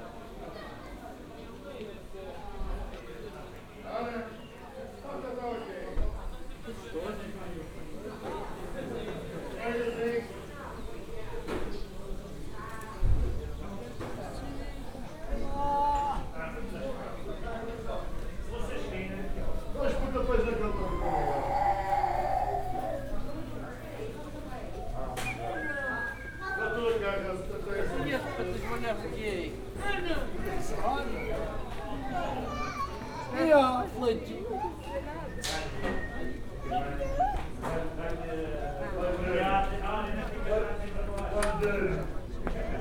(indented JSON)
{
  "title": "Madeira, Monte, near church - sled drivers",
  "date": "2015-06-01 16:54:00",
  "description": "(binaural) standing near a bar where Monte toboggan sled drivers hang out while waiting for customers or taking a break. a bit later into the recording moving towards the place where the ride starts. a few tourist decide to take a ride.",
  "latitude": "32.68",
  "longitude": "-16.90",
  "altitude": "583",
  "timezone": "Atlantic/Madeira"
}